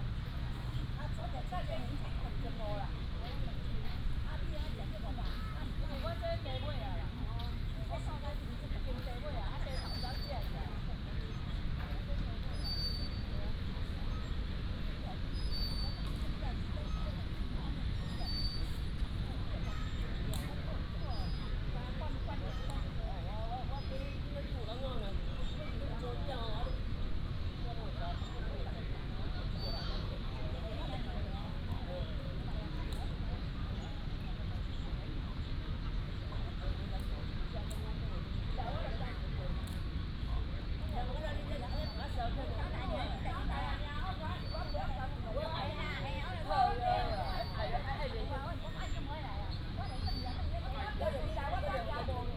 水萍塭公園, Tainan City - Old man and swing
in the Park, Old man chatting, Children play area, swing sound
Tainan City, Taiwan, 18 February 2017, 15:21